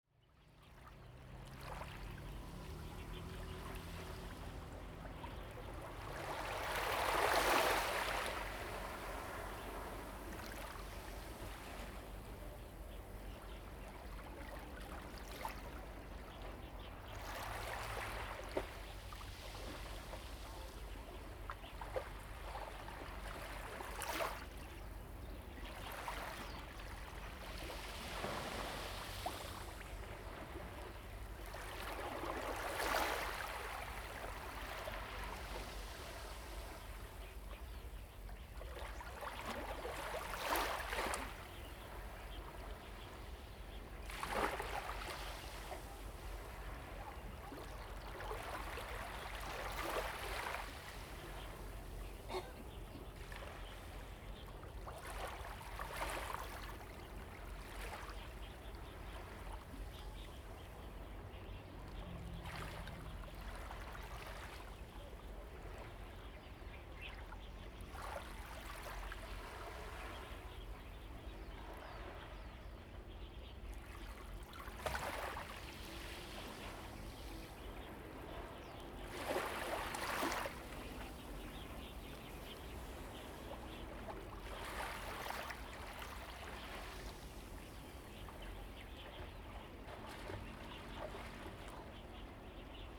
Birds singing, Chicken sounds, Small fishing port, Small beach, Waves and tides
Zoom H2n MS+XY